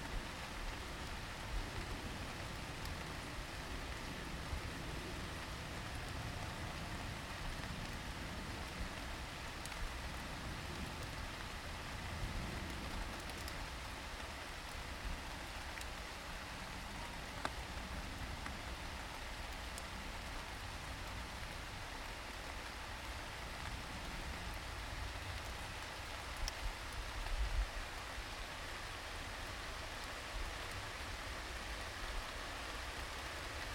Heaton Park, Ouseburn Rd, Newcastle upon Tyne, UK - Beech Trees in Heaton Park
Walking Festival of Sound
13 October 2019
Beech Trees, rain. Walking underneath trees. Inside a hollow tree. aeroplane overhead.